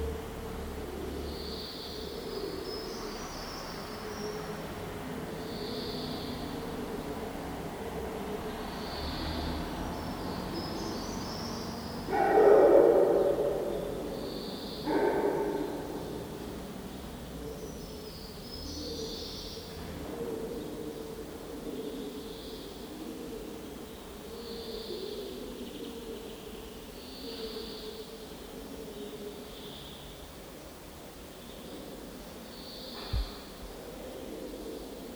place de leglise, nonac, legende de sang
enregistré sur le tournage de legende de sang de Julien Seri
Nonac, France